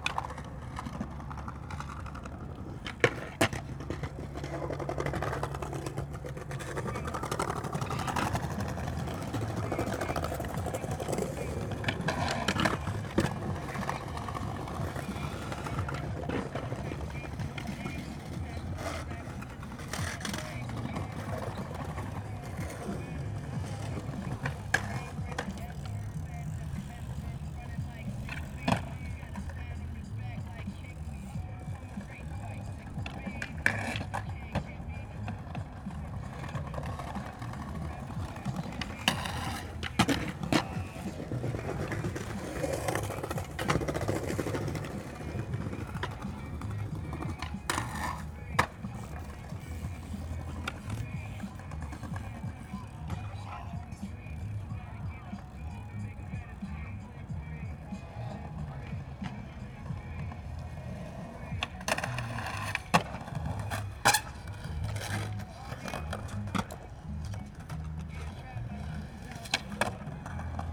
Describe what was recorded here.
Tempelhof, skaters and freestyle cyclists practising, (Sony PCM D50, DPA4060)